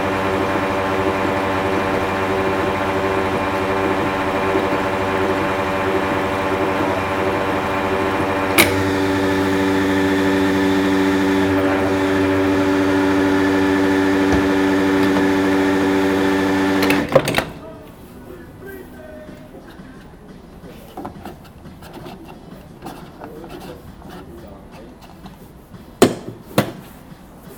weißwasser, bautzener str, night filling station
night time at a local 24 hour open filling station. the sound of the d´gas automat, steps and inside the station. In the background the local youth hanging around.
soundmap d - social ambiences and topographic field recordings